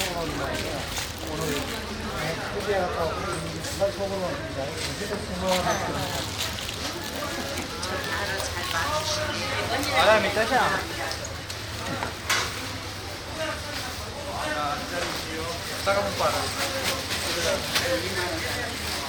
Domabahce Palace Istanbul - Dolmabahce Palace Istanbul
entrance of dolmabahce palace, may 2003: visitors talk and put on plastic overshoes. - project: "hasenbrot - a private sound diary"
Beşiktaş/Istanbul Province, Turkey